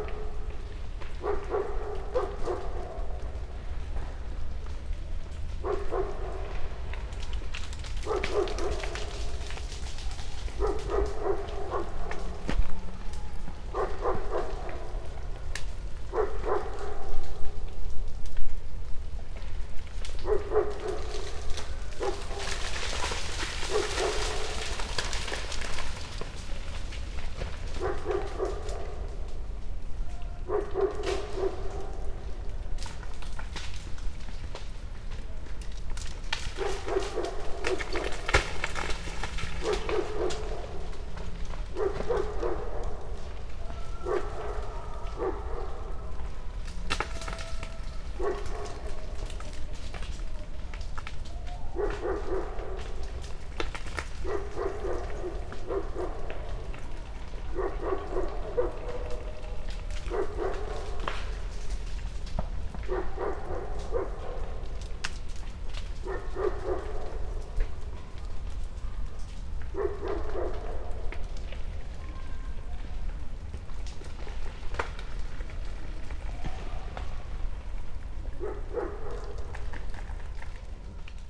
with distant sounds of barking dog and tennis hall users